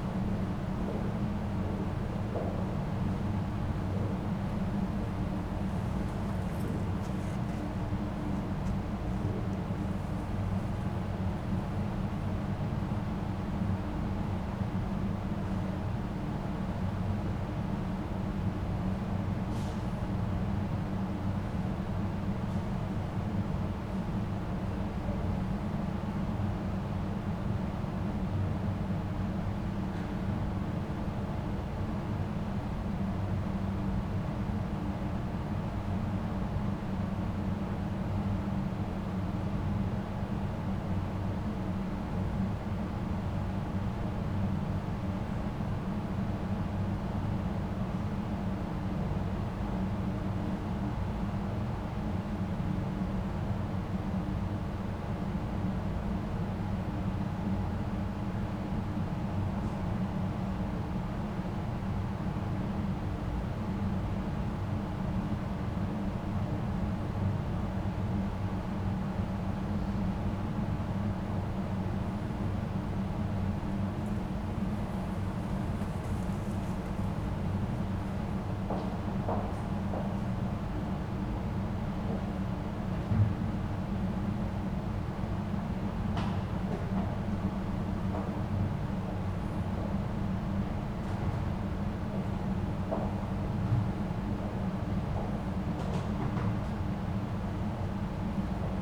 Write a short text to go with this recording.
heating system of the protestant church, presbyter tidying up the church, the city, the country & me: october 15, 2011